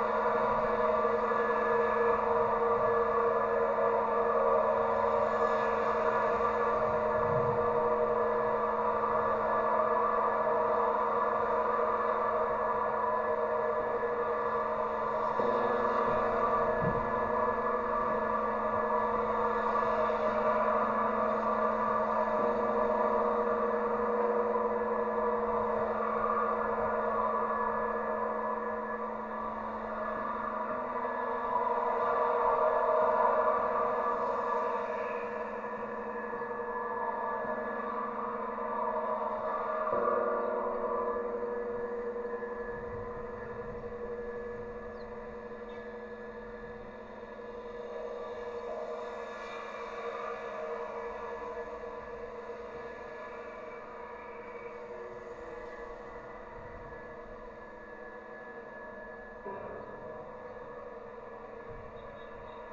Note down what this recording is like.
tram pole at Trokantero station, (Sony PCM D50, DIY stereo contact mics)